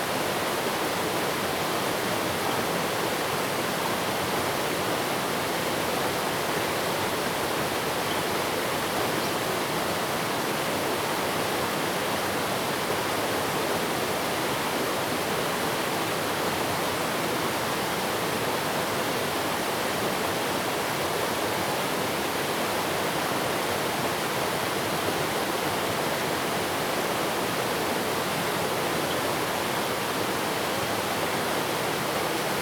Wayaozi River, Tamsui Dist., New Taipei City - Above streams
stream, Bridge
Zoom H2n MS +XY
Tamsui District, 淡金路四段583巷16號, 16 April 2016